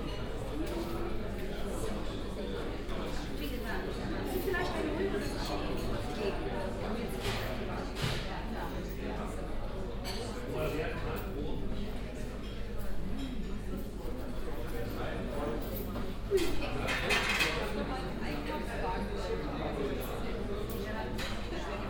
berlin, kurfürstenstraße, inside cafe einstein
soundscape of the interior of the cafe einstein in the early afternoon
soundmap d: social ambiences/ listen to the people - in & outdoor nearfield recordings
Berlin, Germany, 19 May 2009